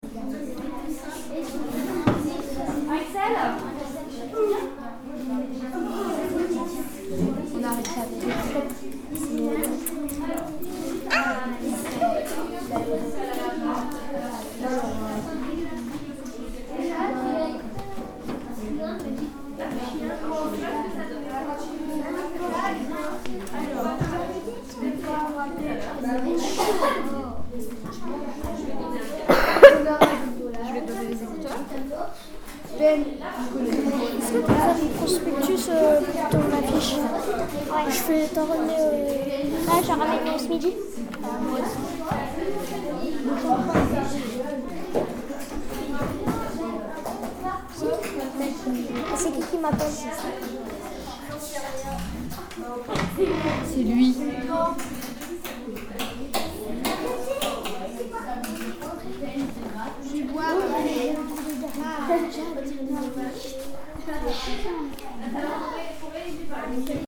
Leforest, France - Classe des CM2, Ecole Jean Rostand
Bruits de la classe des CM2 de Mme Monnier. Les élèves préparent leurs exposées et travaillent sur l'enregistrement de bruits pour un livre audio.
Sounds of the CM2 class of Mrs Monnier. Pupils are working on their exposes and the recording of sounds for an audio book.